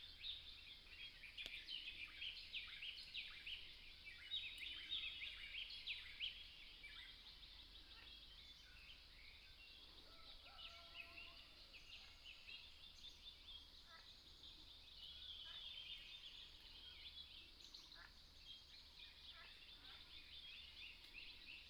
TaoMi 綠屋民宿, Nantou County - Bird calls
Bird calls, Frogs sound, at the Hostel
Nantou County, Taiwan, 29 April 2015